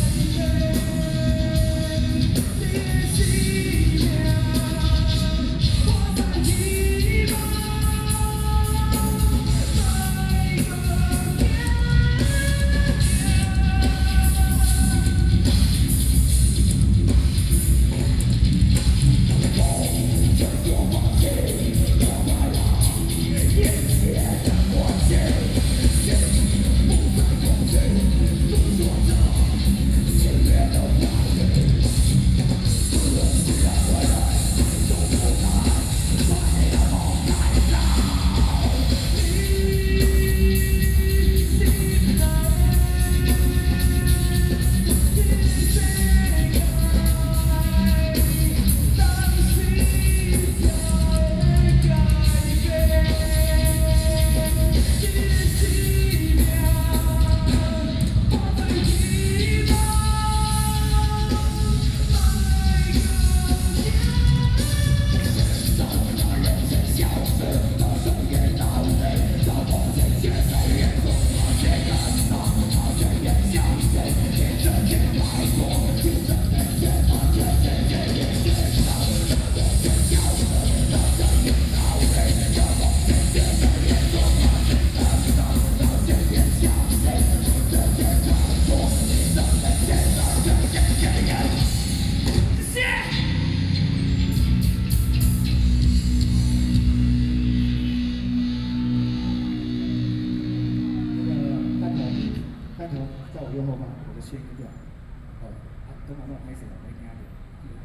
Ketagalan Boulevard, Taipei - against nuclear power
Rock band performing, Sony PCM D50 + Soundman OKM II
台北市 (Taipei City), 中華民國, 19 May, 19:32